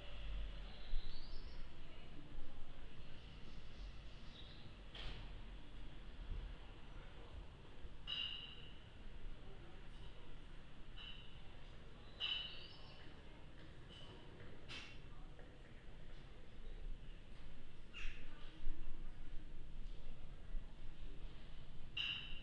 Münzgasse, Tübingen
Mittelalterliche Gasse mit Fachwerkhäusern, Fußgängerzone.